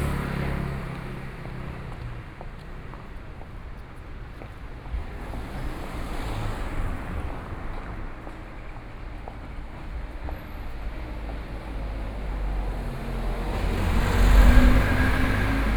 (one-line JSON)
{"title": "Andong St., Taipei City - Footsteps sound", "date": "2014-05-02 11:57:00", "description": "Traffic Sound, Footsteps sound, Walking in the streets", "latitude": "25.05", "longitude": "121.54", "altitude": "8", "timezone": "Asia/Taipei"}